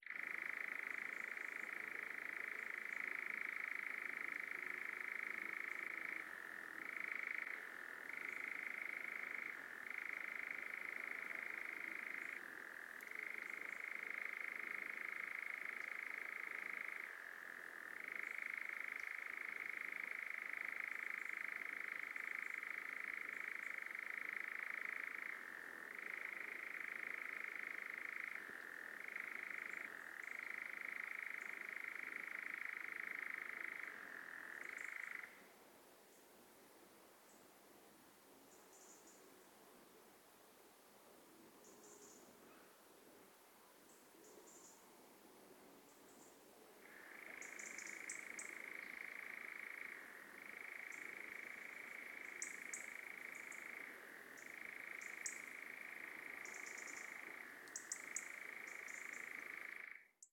Notre-Dame-de-Monts, France - Solo insect in the forest
Une après midi au bord d'un chemin dans la forêt.
i guess its an insect, nope ?
/zoom h4n intern xy mic
16 January 2016